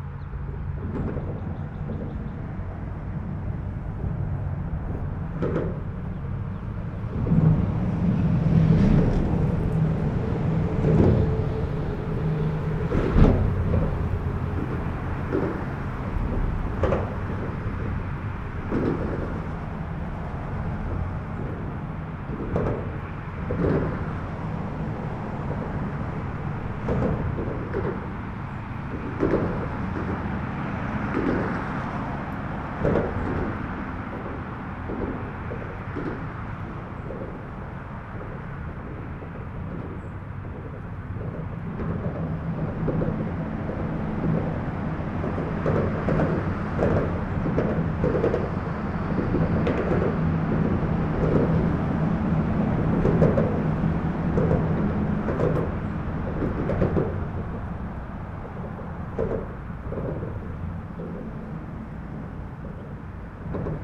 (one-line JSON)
{"title": "under the Parmer Ln overpass, Austin TX", "date": "2010-03-25 06:41:00", "description": "sounds of the highway above as cars pass overhead", "latitude": "30.51", "longitude": "-97.78", "altitude": "249", "timezone": "Europe/Tallinn"}